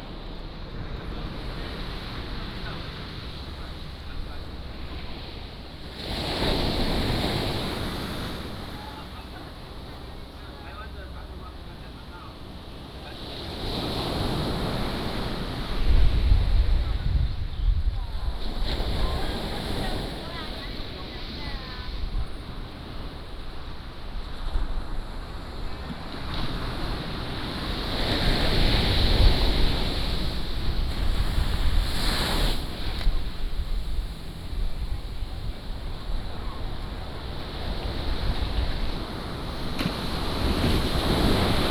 午沙港, Beigan Township - Small port
Small port, Small village, Sound of the waves